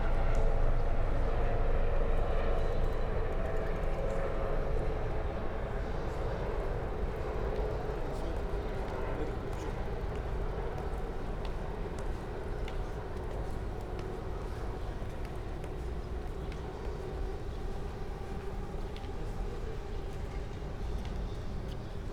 Berlin, Schiffbauerdamm, have been curious about the acoustic situation in between the government buildings, near the river. Sunday evening ambience, few days after the relaxation of the Corona lockdown rules.
(SD702, DPA4060)
Deutschland, May 2021